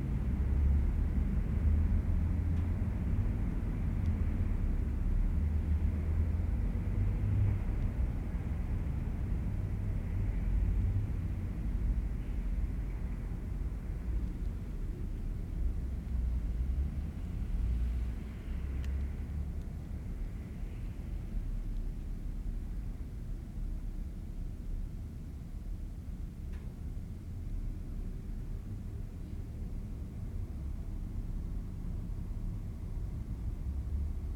Calgary +15 1st St SE bridge
sound of the bridge on the +15 walkway Calgary